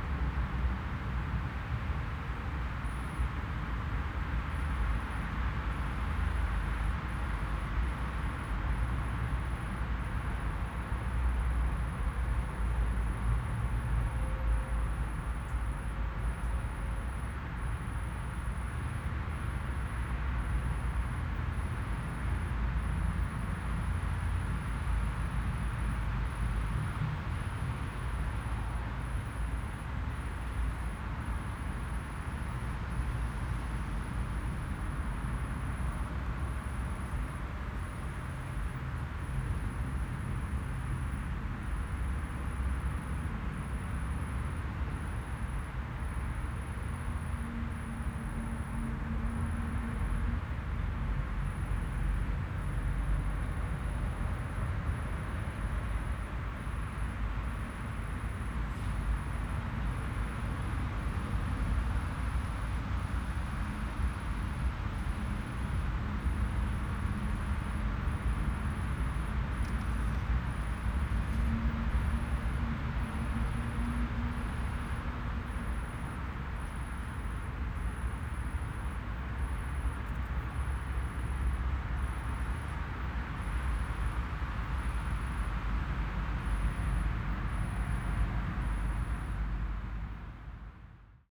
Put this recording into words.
The northend of Bindermichl park where the autobahn emerges from the tunnel below. For your eyes is a beautiful linear park planted with native and exotic trees, bushes and flowers. For your ears only traffic. This was recorded beside a row of metal pillars overgrown with vines bearing very large green beans.